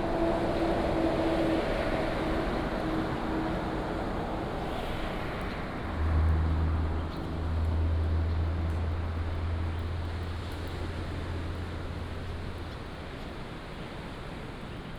上多良部落, Taimali Township - Facing the sea
Road outside the station, Facing the sea, Bird cry, Traffic sound, early morning, Sound of the waves
Binaural recordings, Sony PCM D100+ Soundman OKM II
Taimali Township, Taitung County, Taiwan